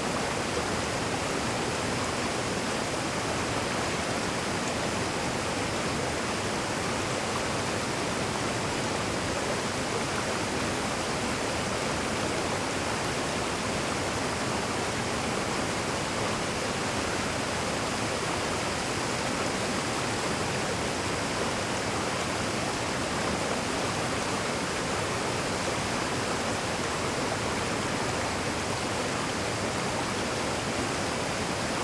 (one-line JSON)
{"title": "ERM fieldwork -mine water pump outlet", "date": "2010-07-04 15:57:00", "description": "water pumped out from an oil shale mine 70+ meters below", "latitude": "59.18", "longitude": "27.32", "timezone": "Europe/Tallinn"}